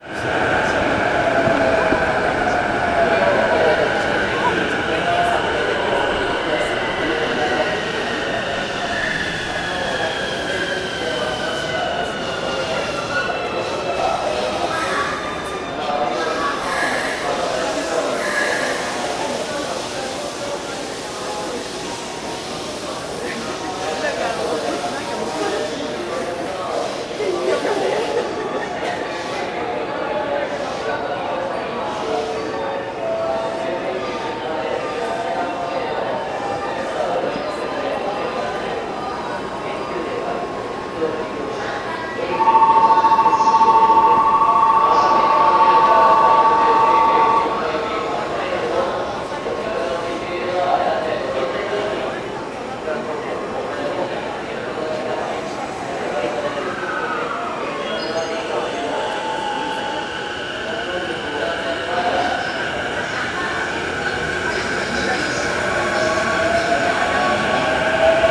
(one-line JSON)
{"title": "Akihabara Tokyo - Shopping mall.", "date": "2019-11-03 20:58:00", "description": "Walking through a shopping mall in Akihabara - Electric Town.\nRecorded with Olympus DM-550", "latitude": "35.70", "longitude": "139.77", "altitude": "16", "timezone": "Asia/Tokyo"}